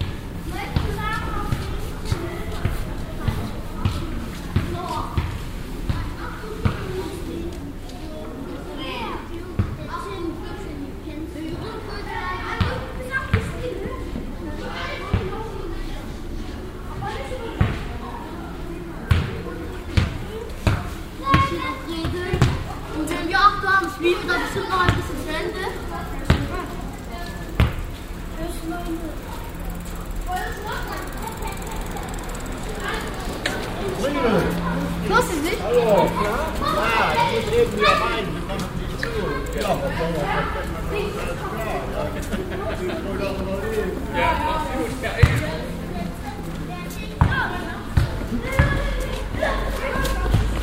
velbert, friedrichstrasse, fussgängerpassage - velbert, friedrichstrasse, fussgaengerpassage
sonntägliche spaziergänger, kinder kicken ball auf kopfsteinpflster im hintergrund gesänge in kirche
soundmap nrw: social ambiences/ listen to the people - in & outdoor nearfield recordings